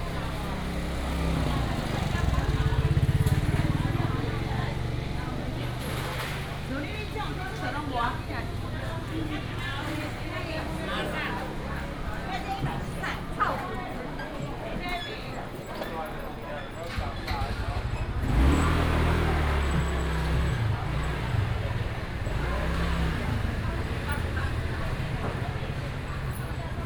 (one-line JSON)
{"title": "東興市場, Taichung City - Walking through the market", "date": "2017-03-22 10:44:00", "description": "Walking through the market, Traffic sound, motorcycle", "latitude": "24.15", "longitude": "120.68", "altitude": "100", "timezone": "Asia/Taipei"}